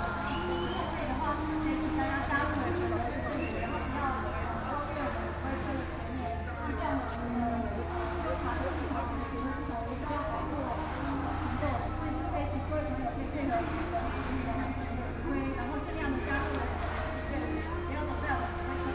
Opposition to nuclear power, Protest
Sony PCM D50+ Soundman OKM II